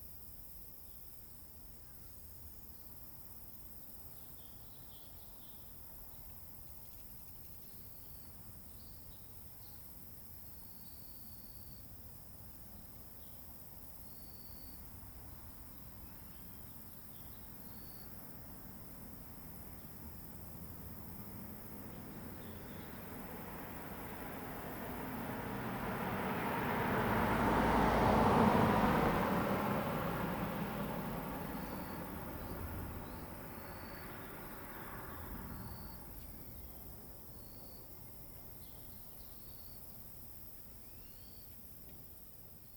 上金暖1鄰, Fuxing Dist., Taoyuan City - traffic sound
Bird call, Cicada sound, traffic sound
Zoom H2n MS+XY
Taoyuan City, Taiwan, 10 August 2017